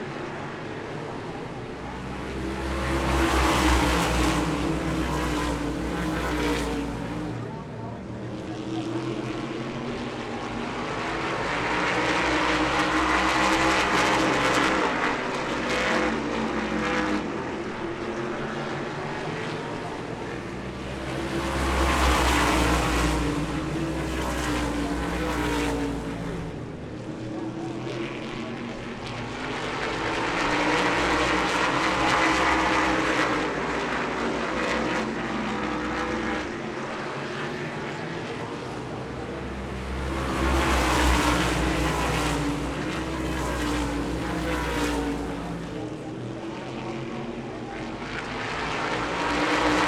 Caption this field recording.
Heat Races for the upcoming 81 lap open modified race